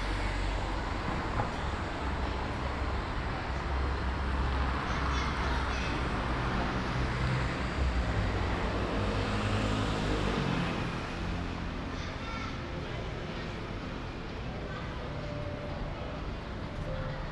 Ascolto il tuo cuore, città. I listen to your heart, city. Several Chapters **SCROLL DOWN FOR ALL RECORDINGS - “La flânerie après trois mois aux temps du COVID19”: Soundwalk
“La flânerie après trois mois aux temps du COVID19”: Soundwalk
Chapter CIII of Ascolto il tuo cuore, città. I listen to your heart, city
Wednesday, June 10th 2020. Walking in the movida district of San Salvario, Turin ninety-two days after (but day thirty-eight of Phase II and day twenty-five of Phase IIB and day nineteen of Phase IIC) of emergency disposition due to the epidemic of COVID19.
Start at 7:31 p.m., end at h. 8:47 p.m. duration of recording 38'23'', full duration 01:15:52 *
As binaural recording is suggested headphones listening.
The entire path is associated with a synchronized GPS track recorded in the (kml, gpx, kmz) files downloadable here:
This soundwalk follows in similar steps to exactly three months earlier, Tuesday, March 10, the first soundtrack of this series of recordings. I did the same route with a de-synchronization between the published audio and the time of the geotrack because:
Piemonte, Italia, June 2020